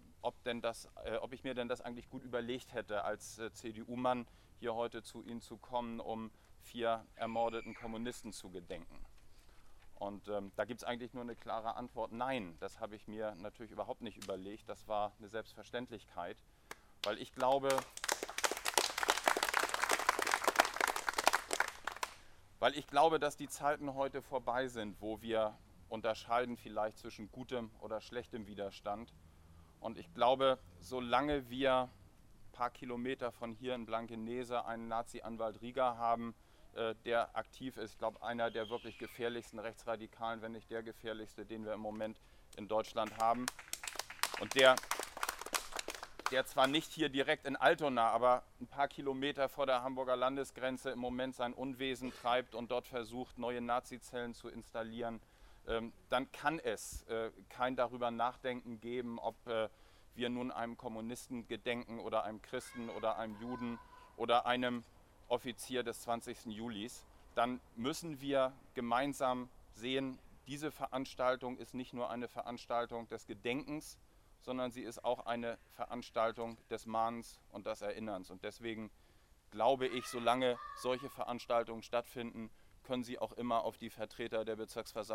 Altonaer Blutsonntag - Gedenktag Justizopfer Altonaer Blutsonntag, 01.08.2009. Teil 1
Rede von Andreas Grutzeck, Schatzmeister der CDU Fraktion & Präsident der Bezirksversammlung Hamburg Altona
1 August 2009, 15:00